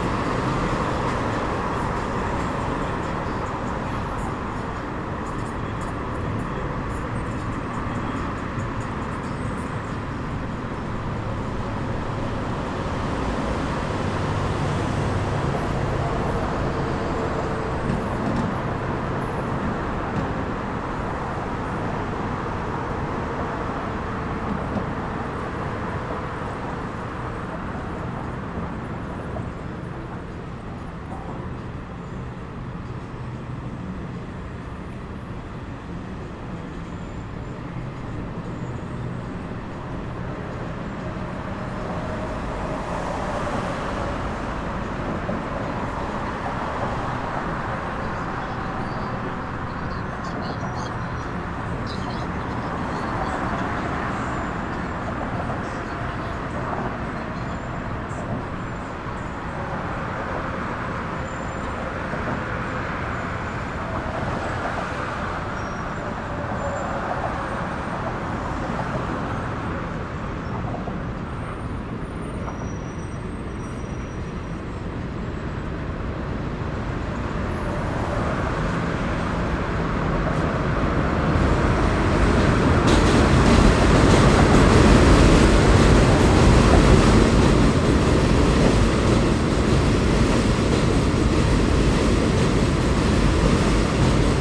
Williamsburg Bridge Bicycle Path, Brooklyn, NY, USA - Williamsburg Bridge - Traffic, Radio and Bikes
Williamsburg Bridge Bicycle Path
Sounds of transit and people crossing the bridge by bike.
NYC, New York, USA, 9 August 2019